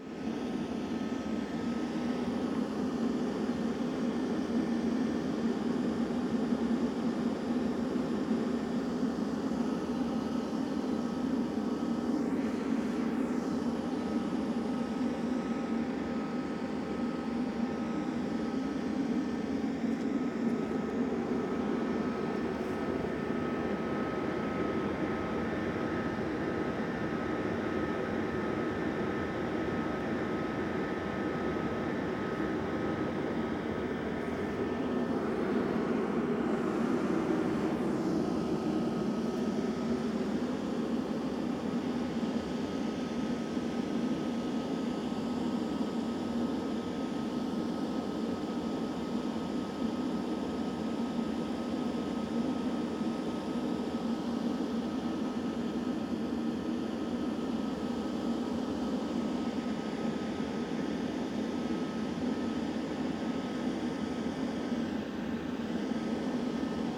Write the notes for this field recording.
huge antenna station in the Rauenstein forest, near Stadt Wehlen, sound of ventilation and other humming, (Sony PCM D50, Primo EM172)